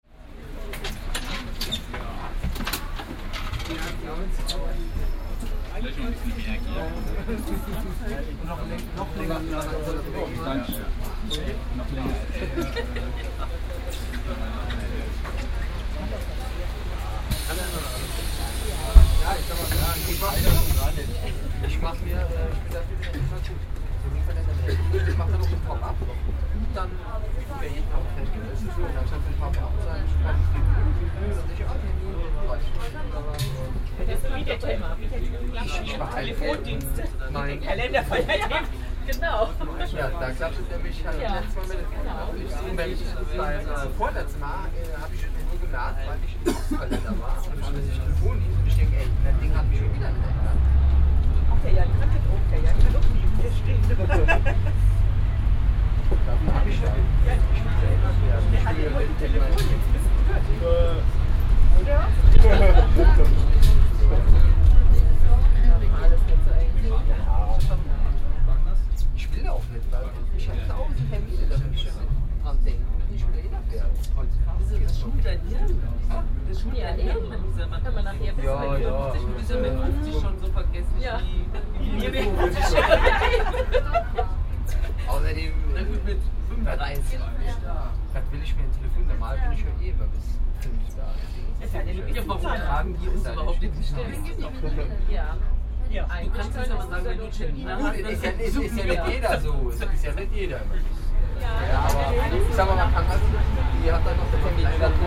Public Bus, Koblenz, Deutschland - Bus to main station Koblenz
Two stations, from Löhr Center to main station, in a bus. Friday afternoon, people are talking.